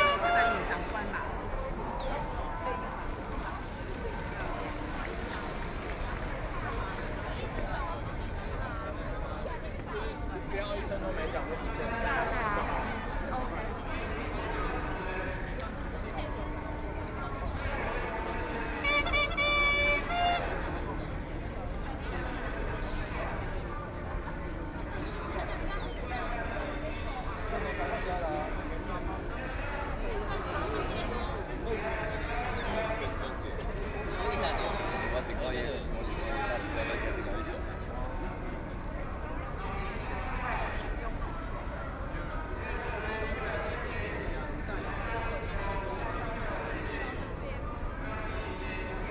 Zhong Xiao W. Rd., Taipei City - occupy
No-nuke Movement occupy Zhong Xiao W. Rd.
Taipei City, Taiwan